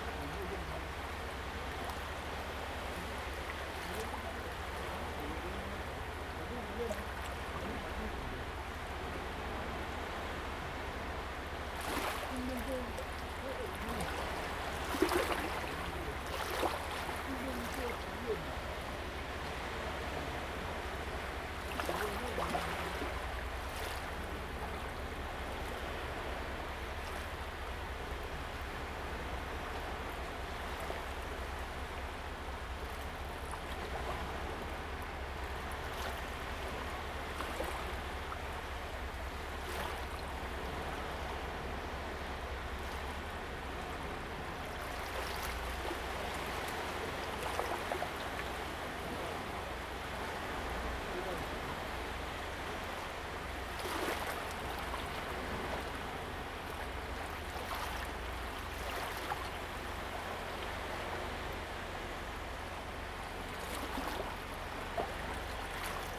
杉福村, Hsiao Liouciou Island - Waves and tides
Waves and tides, below the big rock
Zoom H2n MS +XY